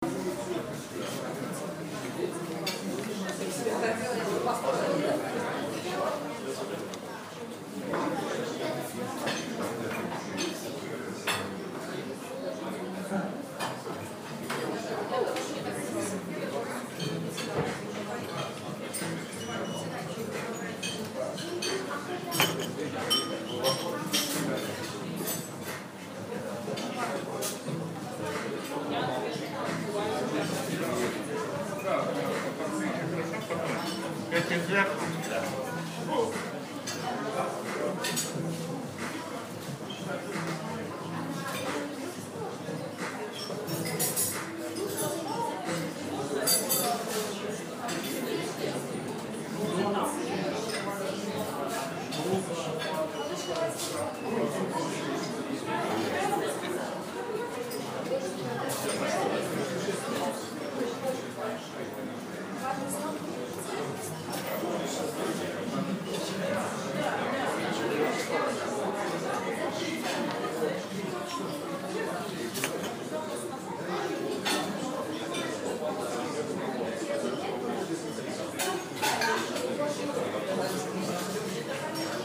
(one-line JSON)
{"title": "Gintovta, Minsk, Belarus - Lunch in cafe Beerfest", "date": "2016-09-06 13:36:00", "description": "It's sound of cafe where I had lunch.", "latitude": "53.95", "longitude": "27.67", "altitude": "223", "timezone": "Europe/Minsk"}